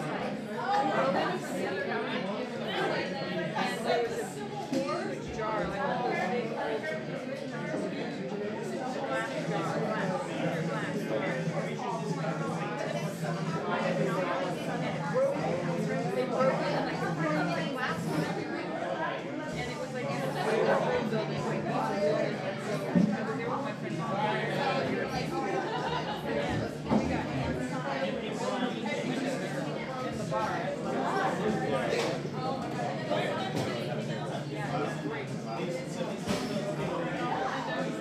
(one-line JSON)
{"title": "The Albatros pub - Berkeley", "date": "2010-11-18 18:10:00", "description": "The Albatros pub in Berkeley", "latitude": "37.87", "longitude": "-122.29", "altitude": "15", "timezone": "America/Los_Angeles"}